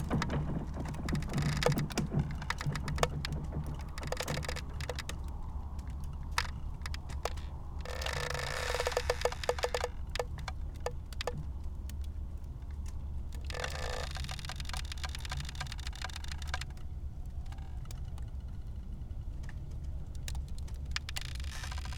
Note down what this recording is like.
Chuncheon lake ice at Lunar New Year 2018